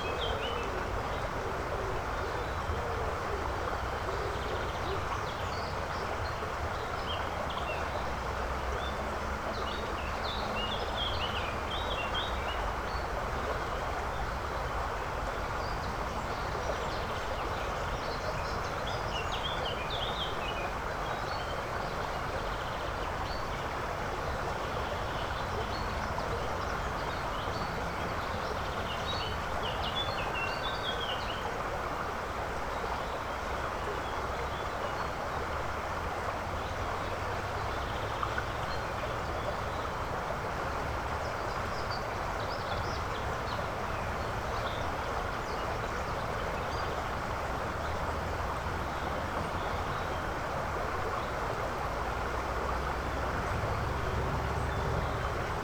altenberg, märchenwaldweg: eifgenbach - the city, the country & me: eifgen creek
the city, the country & me: may 6, 2011
Odenthal, Germany, 6 May 2011, 17:16